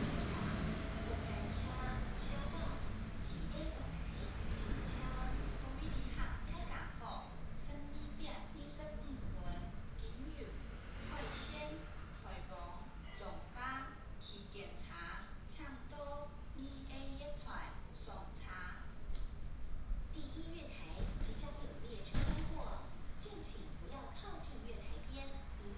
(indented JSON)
{
  "title": "Xiangshan Station, Hsinchu City - The old little station",
  "date": "2017-01-16 12:23:00",
  "description": "The old little station, In the station hall, Train traveling through",
  "latitude": "24.76",
  "longitude": "120.91",
  "altitude": "6",
  "timezone": "GMT+1"
}